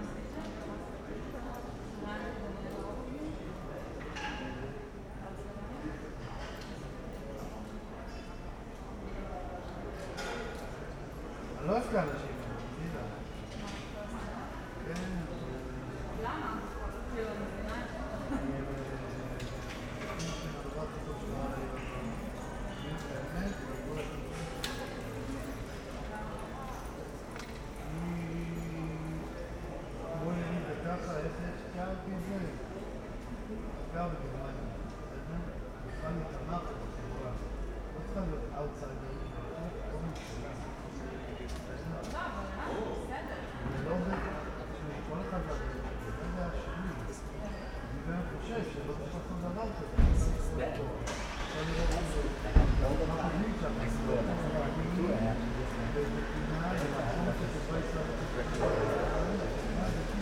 Husemannstraße, Berlin, Germany - Husemannstraße, spring night
Stereorecording from a lower balcony during the night, light traffic, people are talking on the terracce of a resturant below.